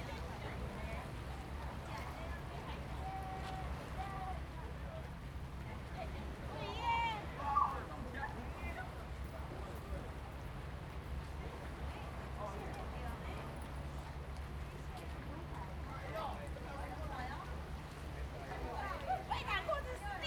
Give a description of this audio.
In the small coastal, Sound of the waves, Tourists, Cruise whistle, Zoom H2n MS +XY